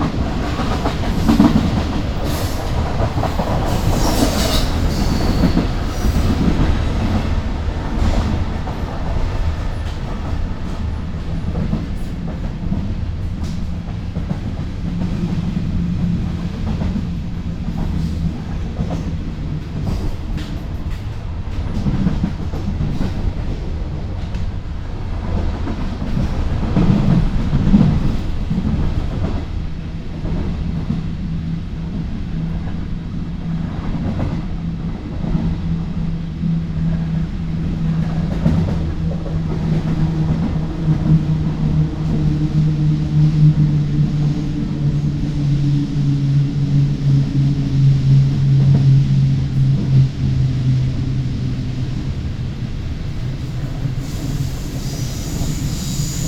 "Far soundwalk and soundtraintrip with break in the time of COVID19" Soundwalk
Chapter CXXII of Ascolto il tuo cuore, città. I listen to your heart, city
Thursday, September 24th, 2020. Walk + traintrip to a far destination; five months and thiteen days after the first soundwalk (March 10th) during the night of closure by the law of all the public places due to the epidemic of COVID19.
This path is part of a train round trip to Cuneo: I have recorded only the walk from my home to Porta Nuova rail station and the train line to Lingotto Station. This on both outward and return
Round trip where the two audio files are joined in a single file separated by a silence of 7 seconds.
first path: beginning at 7:00 a.m. end at 7:31 a.m., duration 30’53”
second path: beginning at 4:25 p.m. end al 5:02 p.m., duration 26’37”
Total duration of recording 00:56:37
As binaural recording is suggested headphones listening.
24 September 2020, 07:00